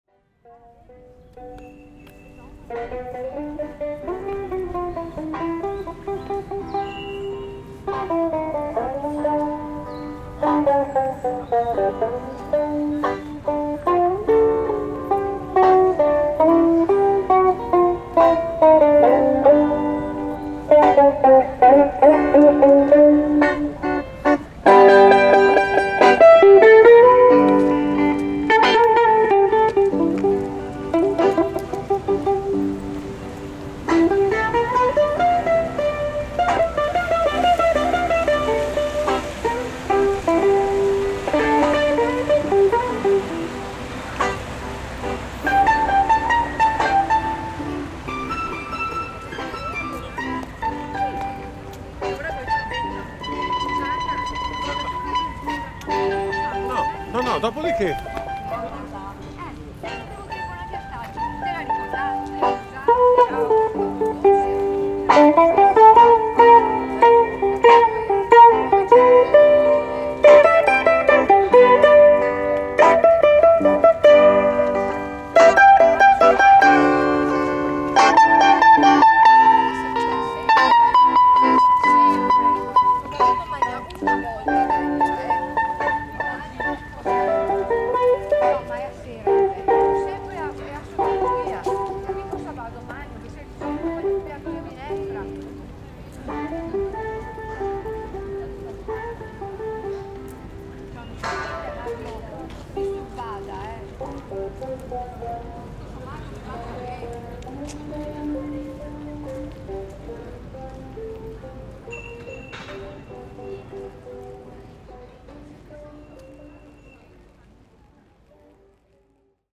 {"title": "Castello, Venise, Italie - Guitar in the street", "date": "2013-11-06 16:10:00", "description": "Walking near a guitarist playing in the street, Venezia, recorded with Zoom H6", "latitude": "45.43", "longitude": "12.35", "altitude": "1", "timezone": "Europe/Rome"}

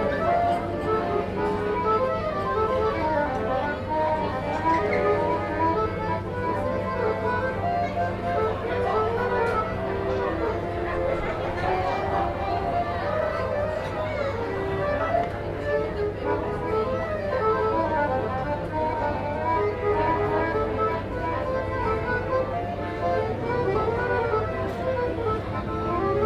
{"title": "Altstadt-Nord, Köln, Deutschland - Im Außencafé des Museums / Outside of the Museum Cafe", "date": "2014-10-11 12:01:00", "description": "Draußen im Museumscafé gesessen. Ein Akkordeon spielt, Stimmen, Geschirr.\nSat outside in the museum café. An accordion plays, voices, dishes.", "latitude": "50.94", "longitude": "6.96", "altitude": "56", "timezone": "Europe/Berlin"}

